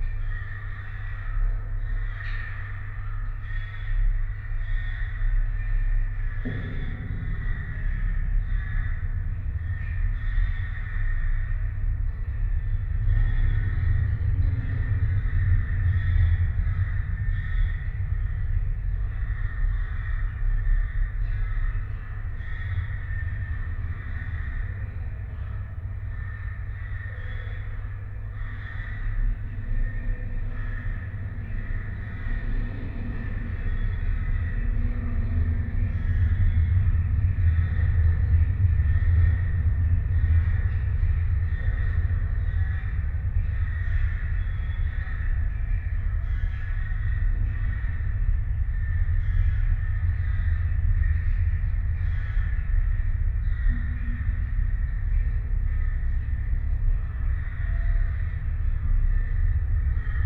Utena, Lithuania, metalic fence
contact microphones on metalic fence surrounding construction zone. almost windless evening. litle pine forest with hundreds of crows is near. on the other side - a street and it's transport drone
27 March 2014